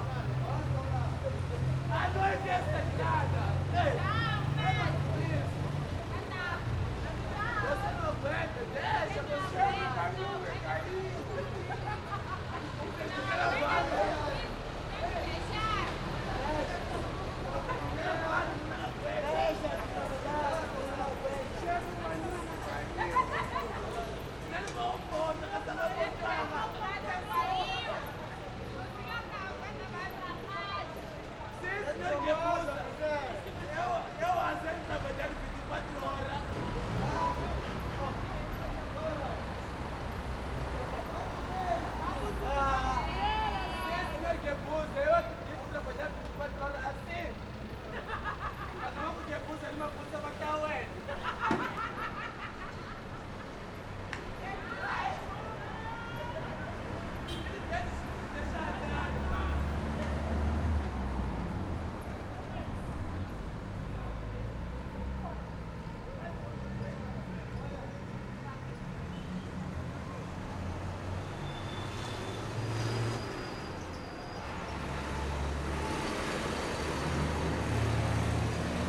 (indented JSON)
{
  "date": "2006-01-03 21:45:00",
  "description": "Captured from a 5th floor balcony facing the street; Av. Julius Nyerere, Maputo, Mozambique; equipment used: Sony MZ-R70 and ECM-MS907.",
  "latitude": "-25.97",
  "longitude": "32.59",
  "altitude": "67",
  "timezone": "Africa/Maputo"
}